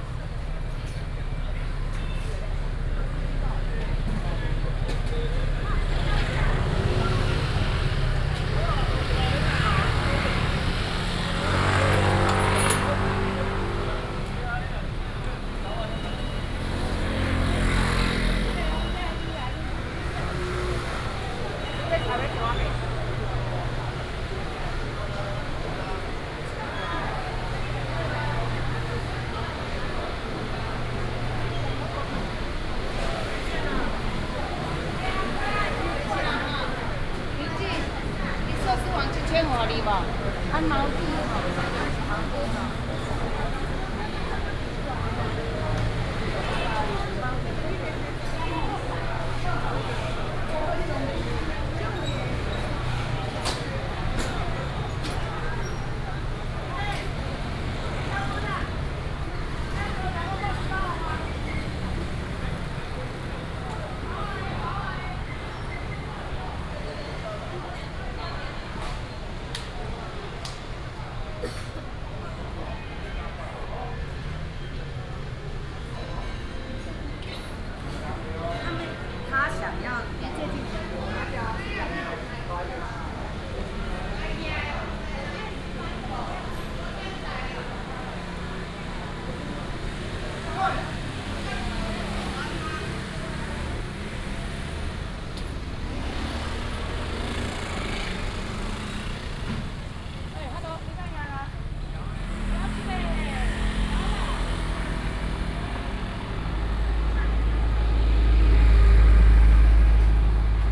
桃園果菜市場, Taoyuan City - Vegetables and fruit wholesale market
walking in the Vegetables and fruit wholesale market